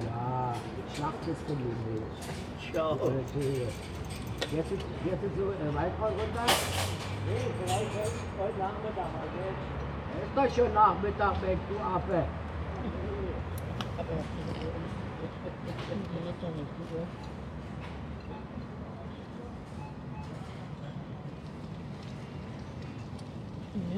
Wollankstraße, Berlin, Deutschland - Wollankstraße, Berlin - cosy talk about ancient times in front of a supermarket bread shop
Wollankstraße, Berlin - cosy talk about ancient times in front of a supermarket bread shop. Three elderly residents talk about the games they used to play outside on the streets when they were children. They conclude that increased road traffic may be one of the reasons why hopscotch, whip tops and kites are mostly outdated among children nowadays.
[I used the Hi-MD-recorder Sony MZ-NH900 with external microphone Beyerdynamic MCE 82]
Wollankstraße, Berlin - Gespräch über vergangene Zeiten, vor dem Bäcker des Supermarkts. Drei ältere Einwohner erinnern sich an die Spiele, die sie als Kinder draußen auf den Straßen spielten. Die heutigen Kinder tun ihnen leid: Sicher liege es auch am gestiegenen Verkehrsaufkommen, dass Hopse, Trieseln und Drachensteigen nun weitgehend der Vergangenheit angehören.
[Aufgenommen mit Hi-MD-recorder Sony MZ-NH900 und externem Mikrophon Beyerdynamic MCE 82]
Berlin, Germany, 13 October 2012